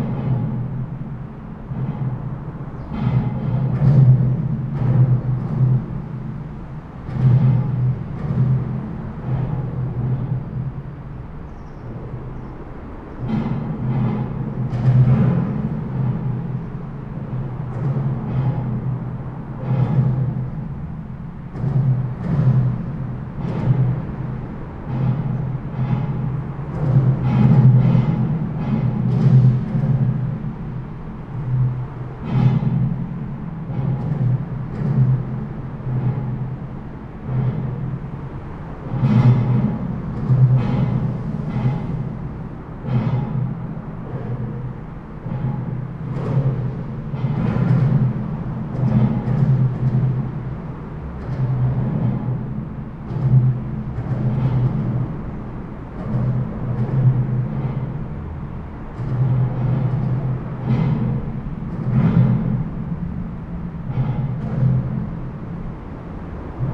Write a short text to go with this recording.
traffic noise under the bridge, borderline: september 24, 2011